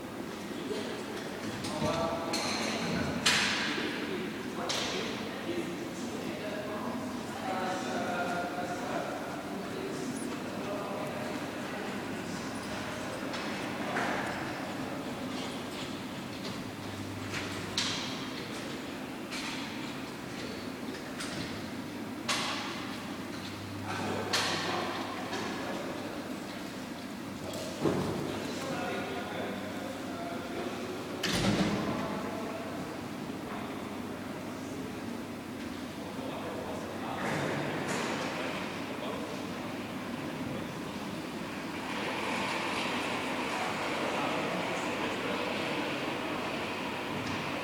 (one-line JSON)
{"title": "Voyer Erdgeschoss, NAWI Salzburg, Austria - Voyer Erdgeschoss", "date": "2012-11-13 10:42:00", "latitude": "47.79", "longitude": "13.06", "altitude": "425", "timezone": "Europe/Vienna"}